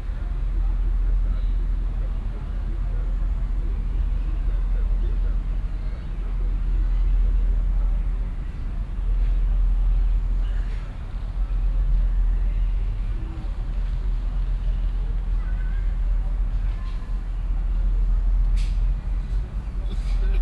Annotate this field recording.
an gebüschen, nahe stadtgarten biergarten, hinter konzertsaal -, stereofeldaufnahmen im juni 08 - nachmittags, project: klang raum garten/ sound in public spaces - in & outdoor nearfield recordings